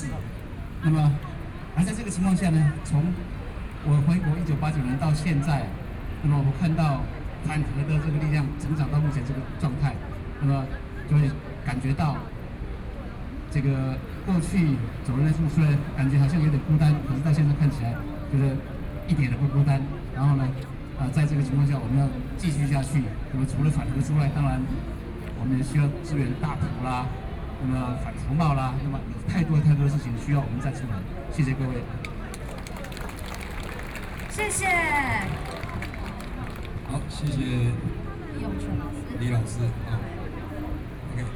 {"title": "Chiang Kai-Shek Memorial Hall - soundwalk", "date": "2013-08-16 19:46:00", "description": "From the square go out to the roadside, Sony PCM D50 + Soundman OKM II", "latitude": "25.04", "longitude": "121.52", "altitude": "11", "timezone": "Asia/Taipei"}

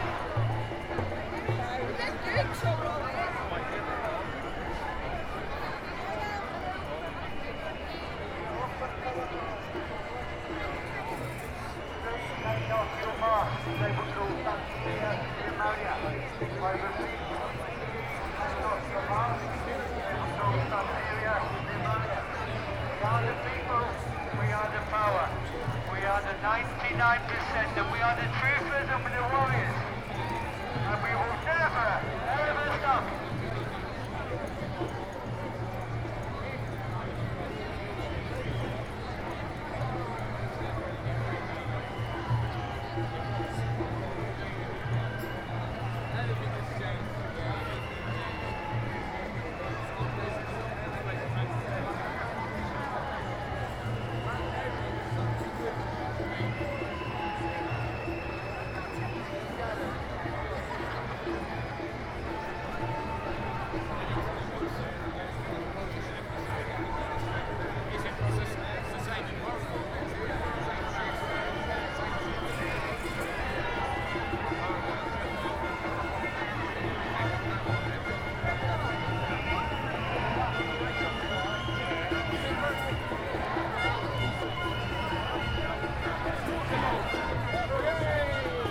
{"title": "Marble Arch, Oxford St, London, UK - Anti-lockdown Freedom March", "date": "2021-04-25 13:00:00", "description": "Binaural recording from the anti-lockdown freedom march in central London on Saturday 25th March. Attended by 25,000 to 500,000 people.", "latitude": "51.51", "longitude": "-0.16", "altitude": "37", "timezone": "Europe/London"}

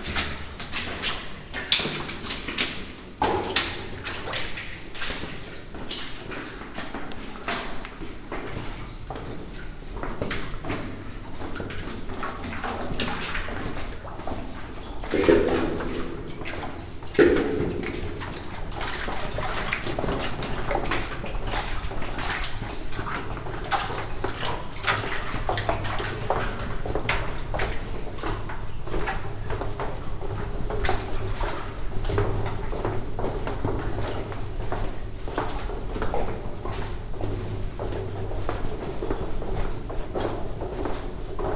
{"title": "stolzembourg, old copper mine, drift walk", "date": "2011-08-09 22:54:00", "description": "Another recording of the walk through the old mines tunnel.\nStolzemburg, alte Kupfermine, Weg\nEine weitere Aufnahme des Wegs durch die Tunnel der alten Mine.\nStolzembourg, ancienne mine de cuivre, promenade dans la galerie\nUn autre enregistrement de la promenade à travers les tunnels de l’ancienne mine\nProject - Klangraum Our - topographic field recordings, sound objects and social ambiences", "latitude": "49.97", "longitude": "6.16", "altitude": "317", "timezone": "Europe/Luxembourg"}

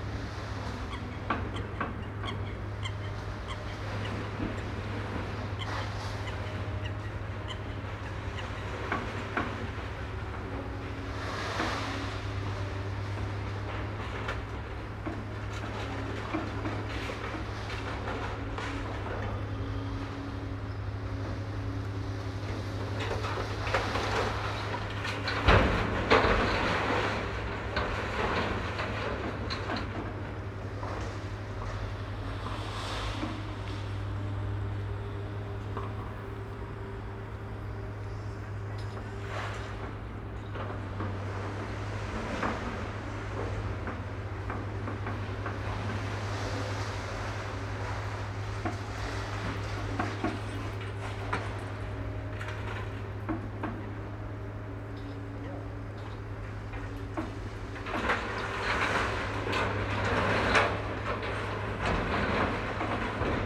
{"title": "Metal Scrap Dumped into 2 Lorries", "date": "2011-11-24 16:39:00", "description": "Lorries being loaded with heavy metal scrap on a cold day as twilight falls. A heavily laden coal barge passes by.", "latitude": "52.54", "longitude": "13.34", "altitude": "33", "timezone": "Europe/Berlin"}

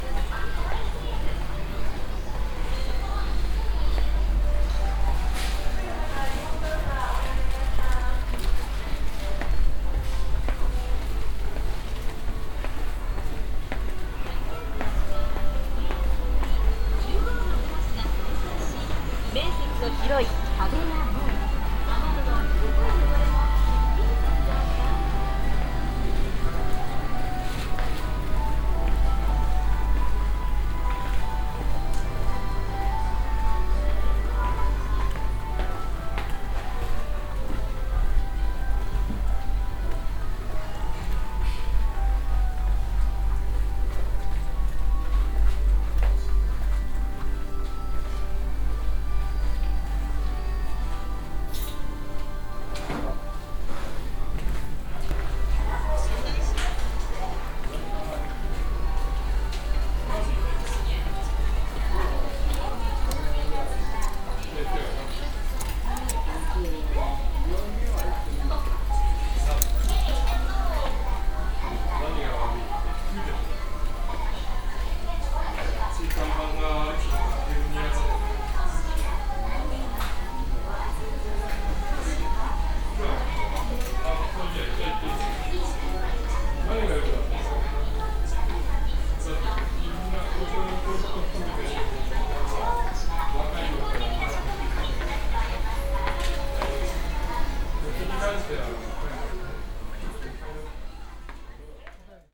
{"title": "takasaki, construction store", "date": "2010-08-22 16:44:00", "description": "at a local construction store, general atmosphere and product announcements while walking thru the shelves\ninternational city scapes and social ambiences", "latitude": "36.32", "longitude": "139.04", "timezone": "Asia/Tokyo"}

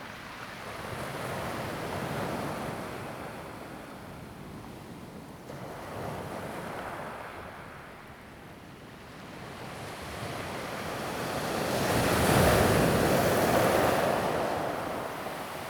{"title": "Ponso no Tao, Taiwan - Sound of the waves", "date": "2014-10-28 16:20:00", "description": "In the beach, Sound of the waves\nZoom H2n MS +XY", "latitude": "22.05", "longitude": "121.51", "altitude": "11", "timezone": "Asia/Taipei"}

